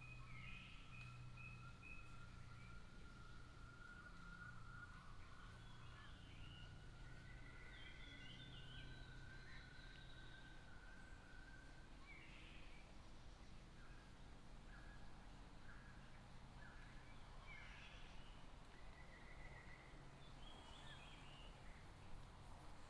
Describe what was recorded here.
Bird sounds, Morning road in the mountains